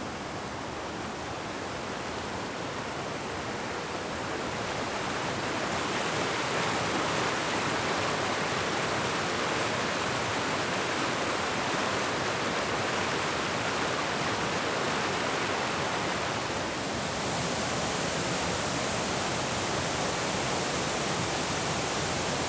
Natural Dam - Walking around Natural Dam
Walking around the Natural Dam Falls